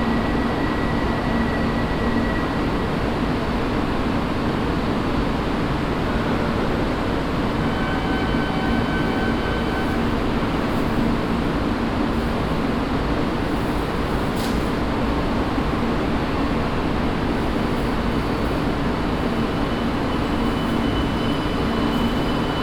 tokio, ueno station
inside the subway railway station. trains passing by and arriving, people passing by
international city scapes and social ambiences
July 2010